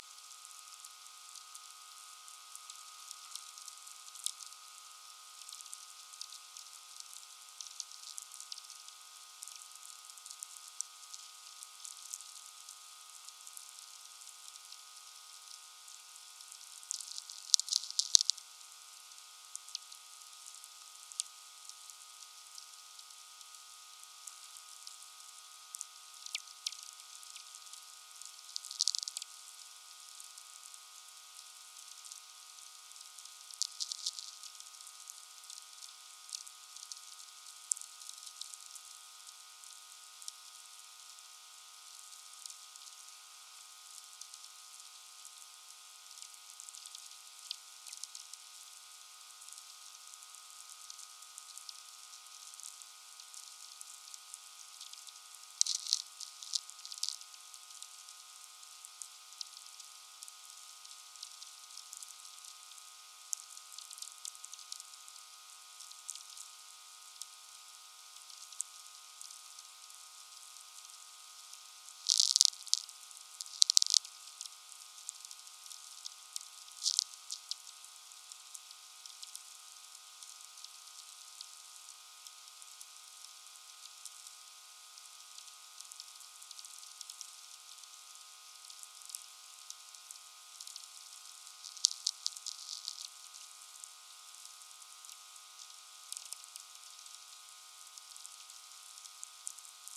Just after the sunset. The sky is still red, the winter is here or there, I stand with VLF receiver and listen to sferics, tweeks...